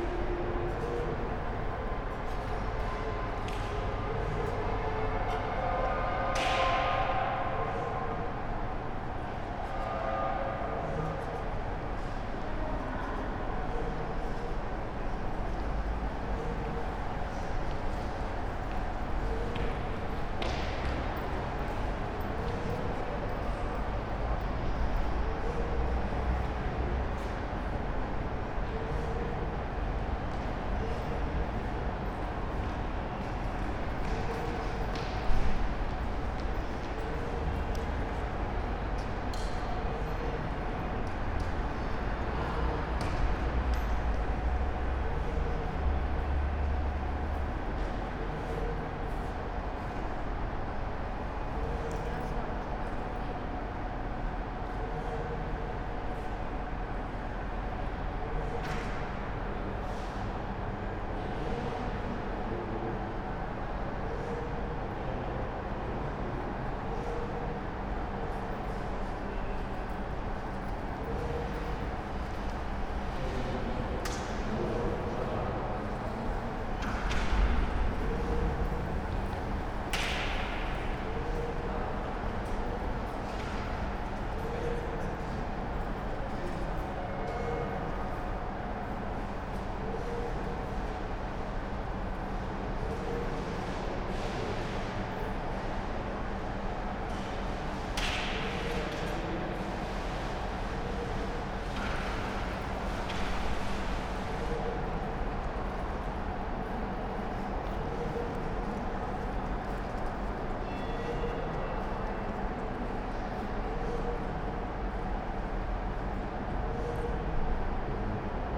Maribor, station hall - afternoon ambience
place revisited
(Sony PCM D50, Primo EM172)